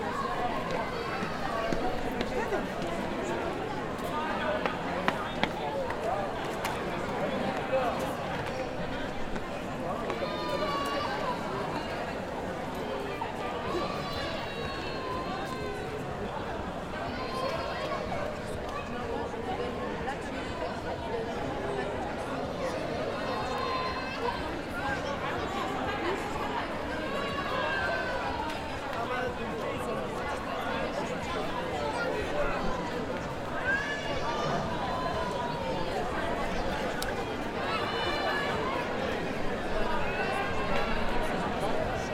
C. Mayor, San Sebastián, Gipuzkoa, Espagne - in front of the cathedral
in front of the cathedral
Captation : ZOOM H6
Gipuzkoa, Euskadi, España, 27 May 2022